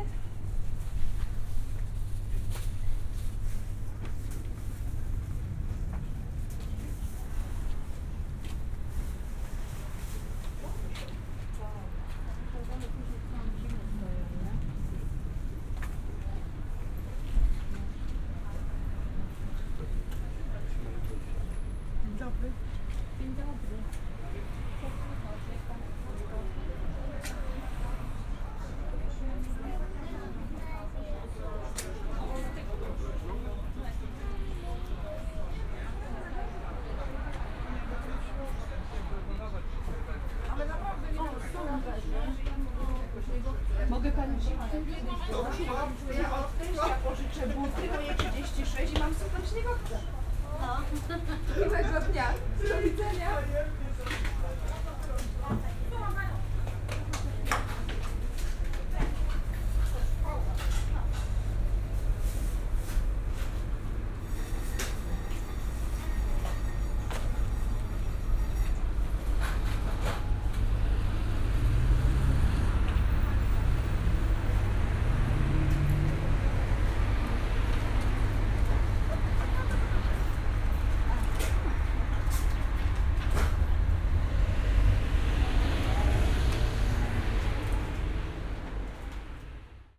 Poznan, Sobieskiego housing complex - marketplace
(binarual recording) walking along a small marketplace. a rather small corridor made of two rows of small shops on both sides. not to many customers on a weekday. starting in a baker's shop, ending on a noisy street. (roland r-07 + luhd PM-01 bins)